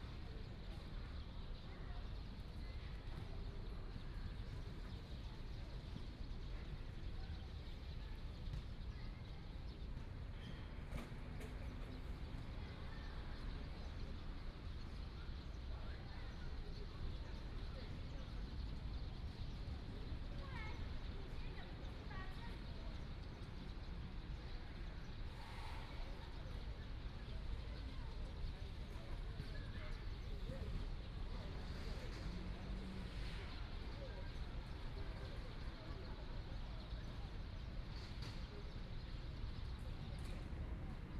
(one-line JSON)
{"title": "Jiancheng Park, Taipei City - in the Park", "date": "2014-02-28 13:47:00", "description": "Afternoon sitting in the park, Traffic Sound, Sunny weather, Playing badminton\nPlease turn up the volume a little\nBinaural recordings\nSony PCM D100 + Soundman OKM II", "latitude": "25.05", "longitude": "121.52", "timezone": "Asia/Taipei"}